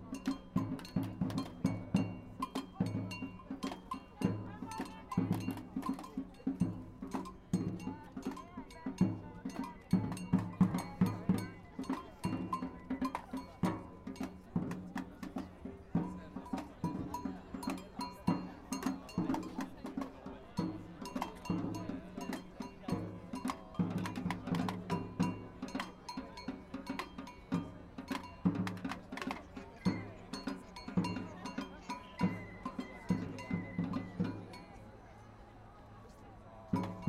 Demonstration, Budapest - Walking in the Underground
The Demonstration (see other recordings next to this one) for Ahmed by Migszol is walking down some stairs and 'vanishes' under the street.